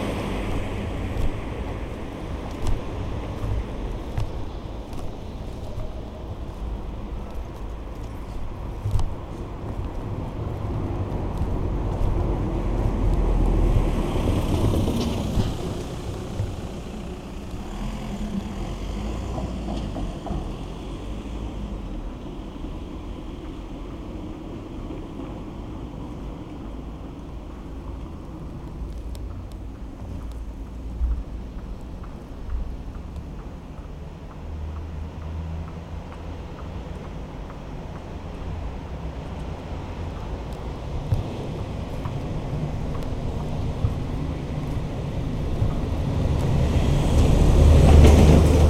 leipzig alt-lindenau, georg-schwarz-straße zwischen ecke erich-köhn-straße & ecke calvisiusstraße
sound-walk? langsam umhergehen mit mikrophonen am rucksack in der georg-schwarz-straße zwischen calvisius- und erich-köhn-straße. fahrzeuge, bauarbeiten, anwohner.